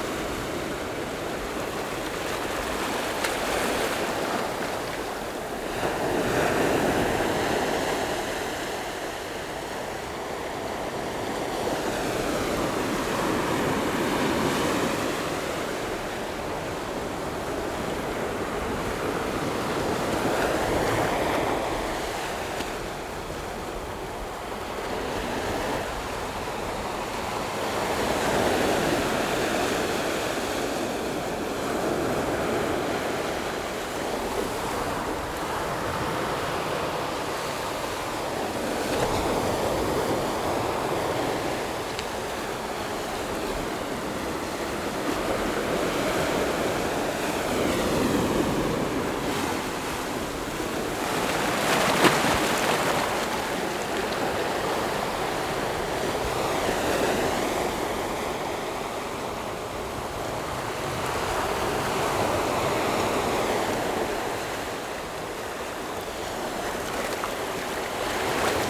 In the beach, Sound of the waves
Zoom H6 MS+ Rode NT4